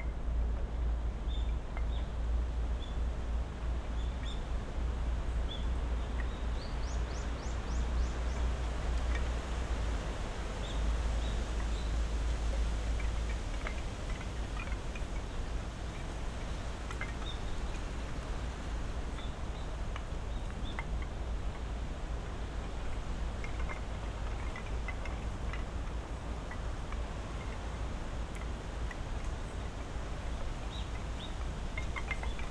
Takano Shrine, Rittō-shi, Shiga-ken, Japan - Takano Shrine on a Windy December Day
At Takano Shrine in Ritto City, Shiga Prefecture, Japan, we can hear wind blowing through high trees in the sacred grove; noisy aircraft, traffic, and other human sounds; several species of birds; and the clatter of wooden prayer tablets that hang near the main sanctuary.
December 2017